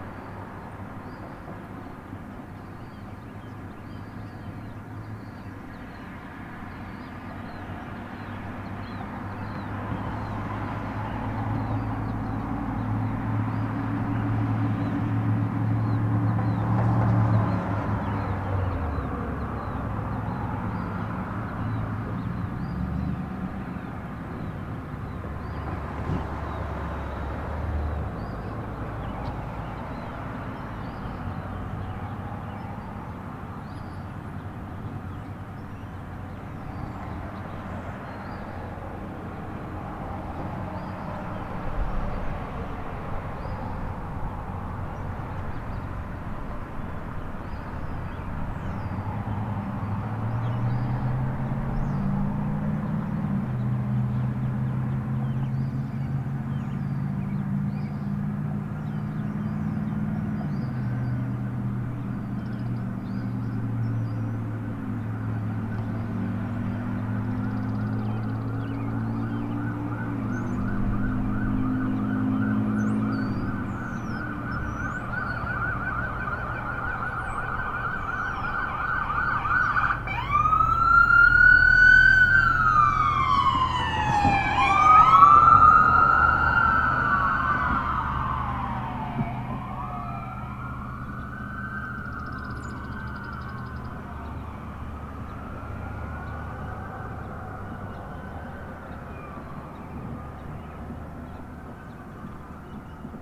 Main Street pedestrian bridge crossing the Wabash River, Bluffton, IN, USA - Main Street pedestrian bridge crossing the Wabash River, Bluffton, IN
Sounds recorded from pedestrian bridge crossing the Wabash River, Bluffton, IN